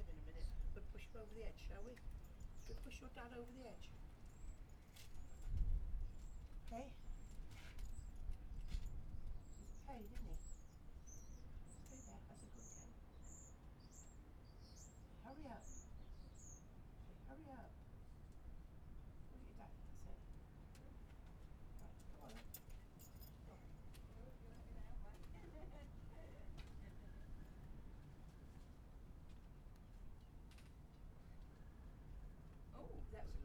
Foghorn ... Seahouses ... air powered device ... open lavalier mics clipped on T bar fastened to mini tripod ...
Seahouses, UK, 26 September